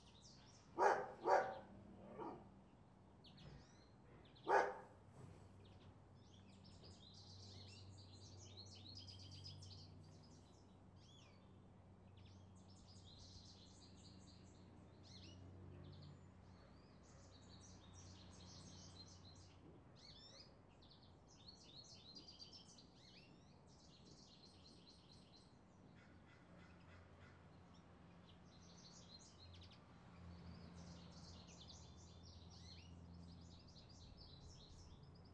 Albany, Carmel ave. - barking dog and birds - Albany, Carmel ave.
my old neighbours would leave their dog to go insane inside his cage for hours, poor animal, apparently things are better now, but I left, I didn't like Albany at all.... most annoying sounds - part 3.